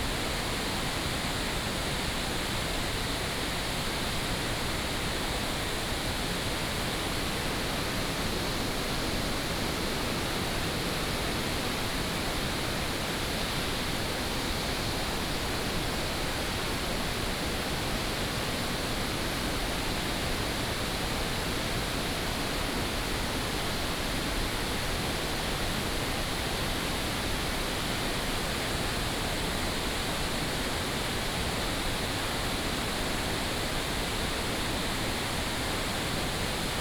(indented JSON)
{
  "title": "Pubu, 烏來里, Wulai Dist., New Taipei City - waterfall",
  "date": "2016-12-05 09:28:00",
  "description": "waterfall\nBinaural recordings\nSony PCM D100+ Soundman OKM II",
  "latitude": "24.85",
  "longitude": "121.55",
  "altitude": "171",
  "timezone": "GMT+1"
}